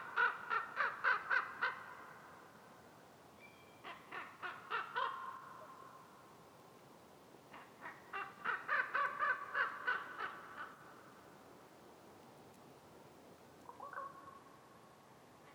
raven in the forest, spring
ворон в лесу весной